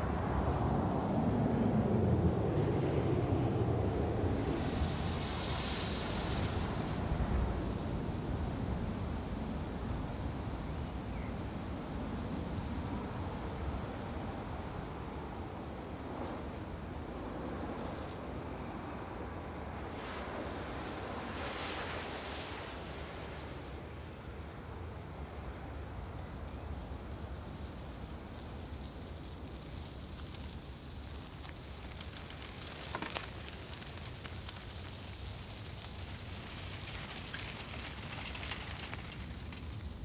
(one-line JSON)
{"title": "Flughafen Berlin-Tegel (TXL), Flughafen Tegel, Berlin, Deutschland - Berlin. Flughafen Tegel – Überflug", "date": "2011-06-05 19:30:00", "description": "Standort: Wanderweg am westlichen Ende des Flughafens. Blick Richtung West.\nKurzbeschreibung: Jogger, Passagiermaschine, Fahrradfahrer.\nField Recording für die Publikation von Gerhard Paul, Ralph Schock (Hg.) (2013): Sound des Jahrhunderts. Geräusche, Töne, Stimmen - 1889 bis heute (Buch, DVD). Bonn: Bundeszentrale für politische Bildung. ISBN: 978-3-8389-7096-7", "latitude": "52.56", "longitude": "13.26", "timezone": "Europe/Berlin"}